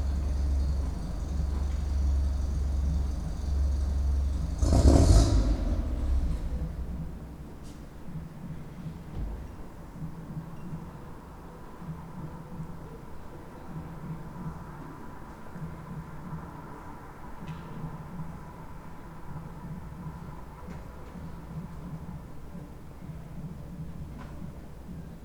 berlin: friedelstraße - the city, the country & me: nervous driver
nervous driver (not able to shut down the motor)
the city, the country & me: april 19, 2011